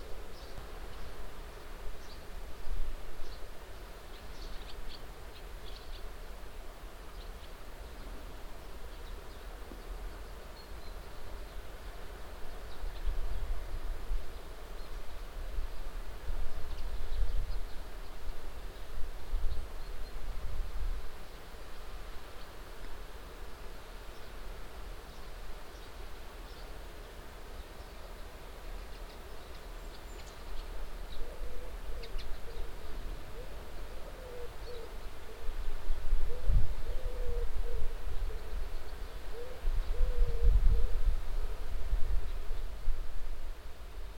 stodby, morning time ambience
morning time ambience close the house area - sparrows hunting each other- crossing the air in high speed, wind movements, cicades, an owl in the distance the waves of the sea
international sound scapes - social ambiences and topographic field recordings